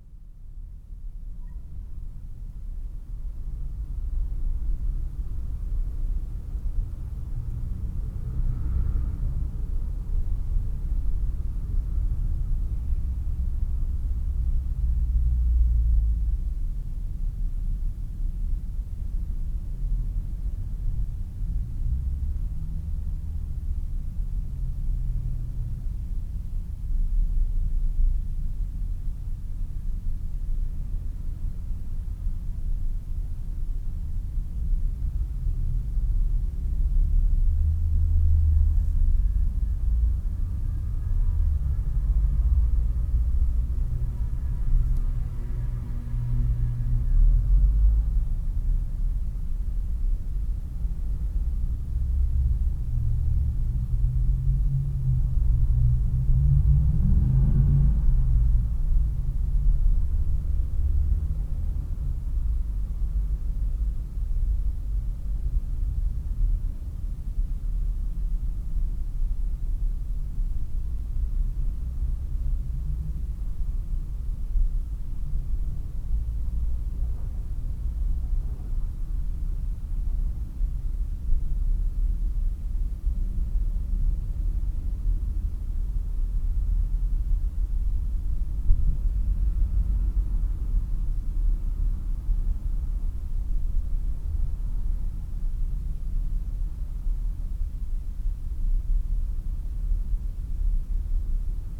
Hamm Westen, Germany - Morning Rush Hour...
… listening to the hum of the morning rush hour on the “Wilhelmstrasse” from inside the attic… I can hear people pulling up the blinds downstairs… I open the window…
…im dreieckigen Holzraum des Trockenbodens höre ich dem Brummen der “morning rush hour” auf der Wilhelmstrasse zu… irgendwo unter mir ziehen die Leute ihre Jalousien hoch… ich öffne eine der Dachluken…